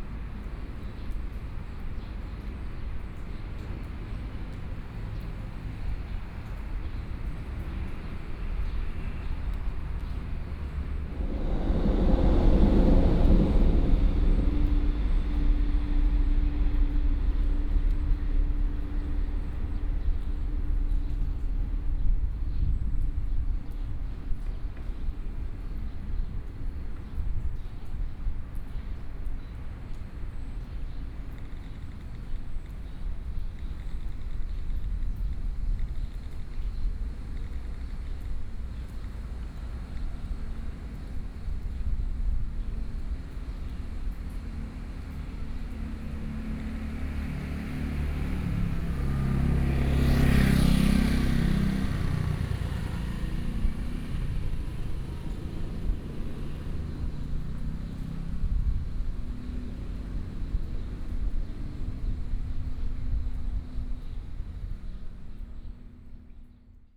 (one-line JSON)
{"title": "宜蘭市凱旋里, Yilan County - under the railroad tracks", "date": "2014-07-22 10:57:00", "description": "Traffic Sound, Trains traveling through, below the railroad tracks\nSony PCM D50+ Soundman OKM II", "latitude": "24.74", "longitude": "121.76", "timezone": "GMT+1"}